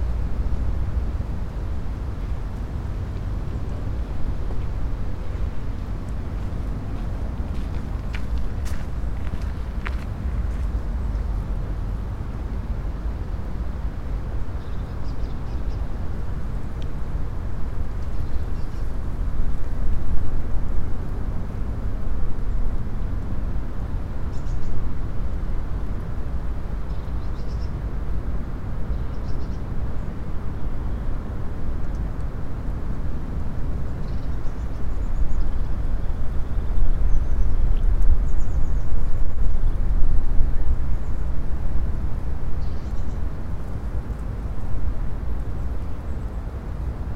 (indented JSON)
{
  "title": "Chemin de Ceinture du Lac Inférieur, Paris, France - (362) Soundscape of Bois de Boulonge",
  "date": "2018-09-24 16:15:00",
  "description": "Recording near the water - ducks, dogs, people running.\nORTF recording made with Sony D100",
  "latitude": "48.86",
  "longitude": "2.26",
  "altitude": "51",
  "timezone": "Europe/Paris"
}